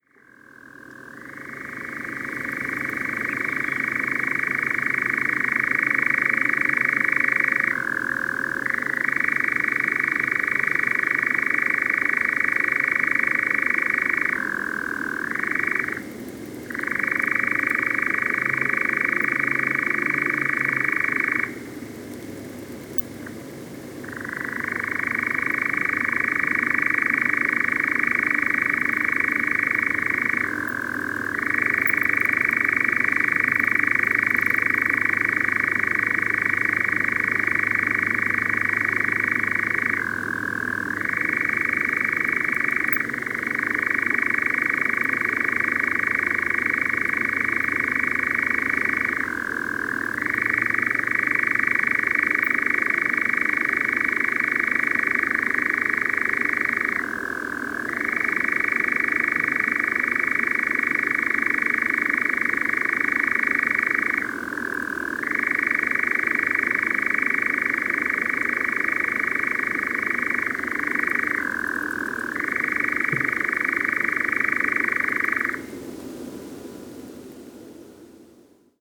Arne, UK - Nightjar churring
Not the best recording, but my first of this remarkable bird. There is an oil refinery nearby as you will hear. Sony M10 mounted directly in a parabolic reflector.
Wareham, UK, 18 July 2016, 23:57